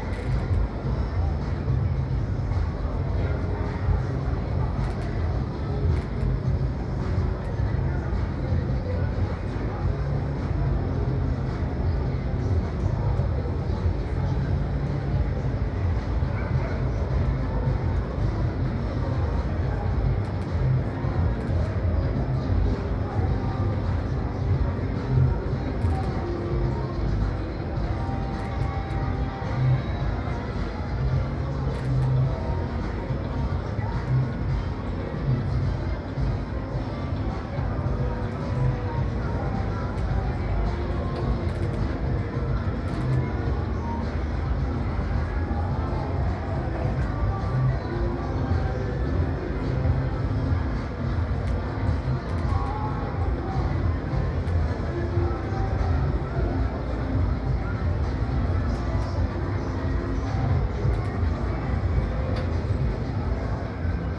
Marina Kalkan, Turkey - 914 distant parties
Distant recording of multiple parties happening in Kalkan city.
AB stereo recording (17cm) made with Sennheiser MKH 8020 on Sound Devices MixPre-6 II.